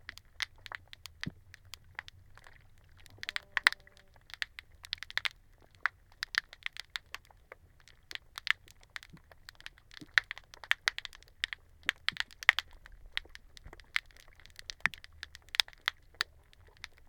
Sarasota Bay, Longboat Key, Florida, USA - Sarasota Bay Snapping Shrimp & Toadfish
Hydrophone recording in Sarasota Bay. A very helpful professor of marine biology/acoustic ecology at New College of Florida identified the pervasive snaps as coming from snapping shrimp and the repeated sounds at 1 sec, 41 secs, 56 secs, 1:11 and 1:17 as being produced by male toadfish to attract females for spawning and to defend their territory.
22 March 2021, ~08:00